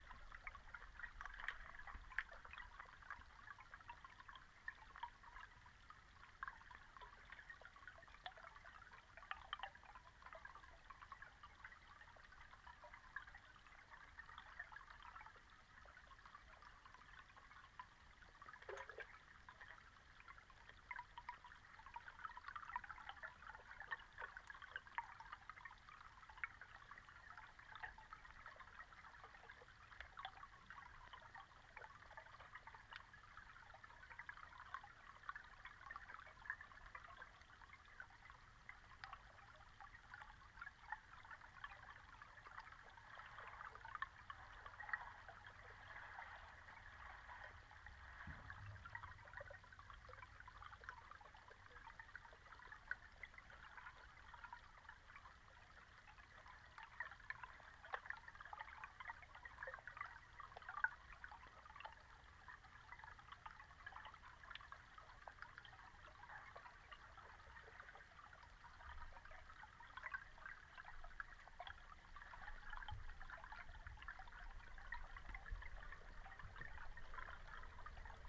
Klondike Park, Augusta, Missouri, USA - Klondike Park Lake
Hydrophone recording of Klondike Park Lake
Missouri, United States, 6 September 2021, 14:24